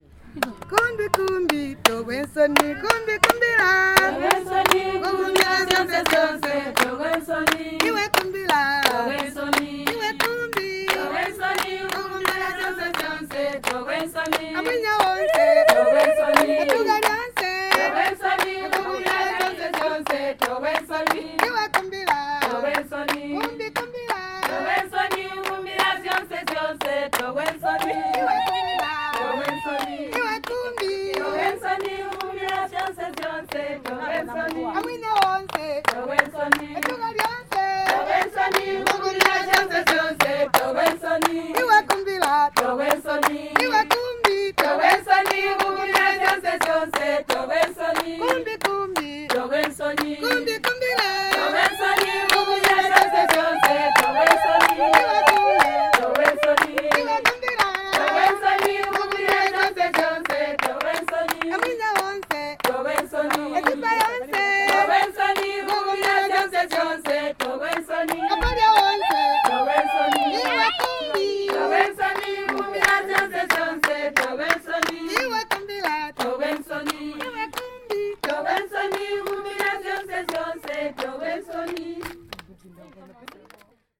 Simatelele, Binga, Zimbabwe - Let's work and stand on our own feet...
The women of Zubo's Simatelele Women's Forum are singing and dancing after a forum meting... they encourage each other and other women to stand on their own feed, economically; not just expecting their husbands to care for them...
Zubo Trust is a women’s organization bringing women together for self-empowerment.